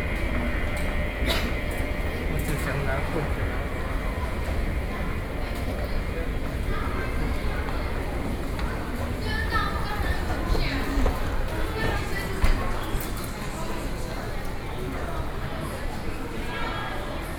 Jing'an Station, New taipei City - Soundwalk
from Hall MRT to MRT platform, Waiting for the train, Sony PCM D50 + Soundman OKM II